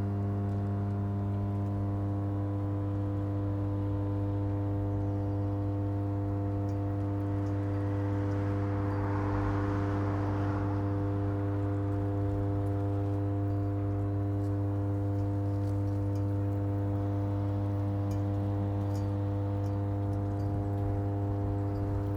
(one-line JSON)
{"title": "Sint-Jans-Molenbeek, Belgium - Beside the electricity substation", "date": "2016-01-29 15:24:00", "description": "Neighborhood hum with traffic and a few dead leaves blowing in the wind. An almost unnoticed sound.", "latitude": "50.85", "longitude": "4.32", "altitude": "34", "timezone": "Europe/Brussels"}